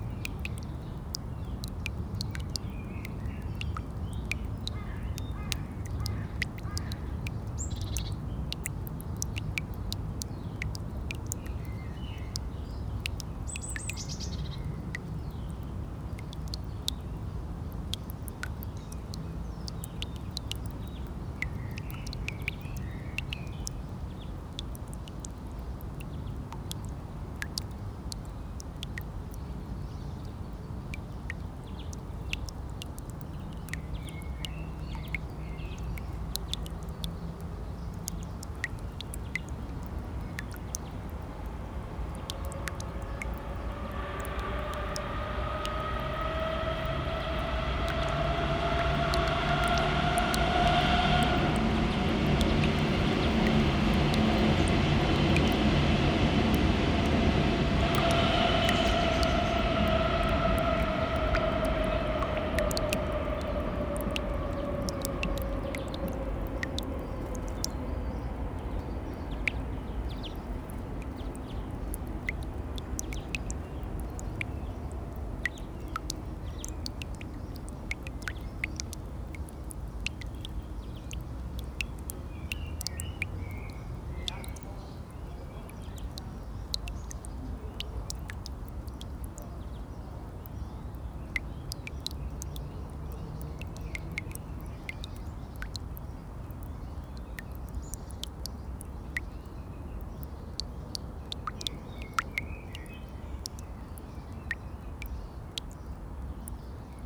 Friedhof Grunewald, Bornstedter Straße, Berlin, Germany - Grunewald Cemetery - quietly dripping tap
Sunny weather. The cemetery is very carefully looked after. Sunday activity is cleaning the paths and watering the plants from one of many taps. The one or two unkempt graves with waist high weeds are so out of place that I found myself troubled and wondering why. What family or friendship history was implied? What had happened to keep them away?